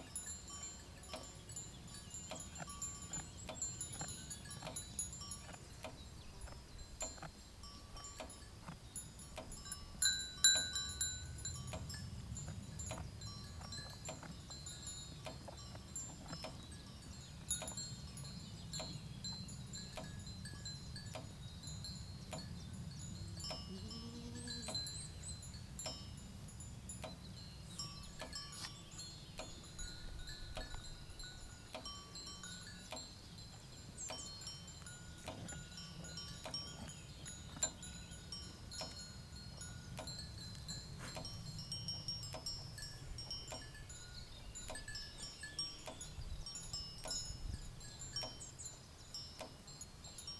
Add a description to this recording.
Nicht nur im Goißatäle, sondern auch im Sackental zwischen Sontbergen und Gerstetten kann man die wiederkäuenden Hornträger antreffen. heima®t - eine klangreise durch das stauferland, helfensteiner land und die region alb-donau